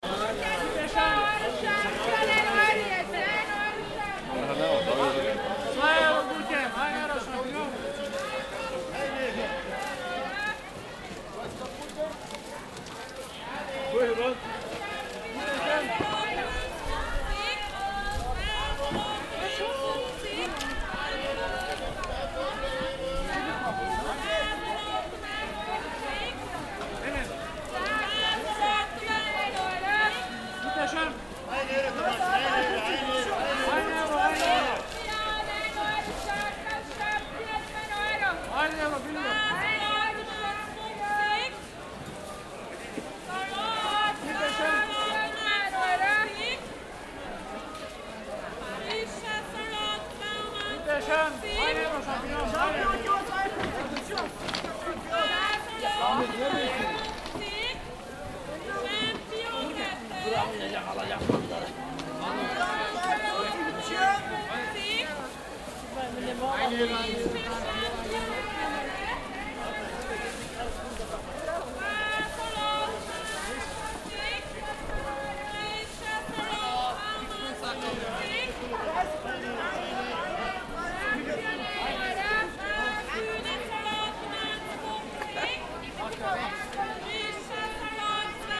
Favoriten, Wien, Österreich - farmers market
pitchman on the farmers market, vienna, 10th district - recorded with a zoom Q3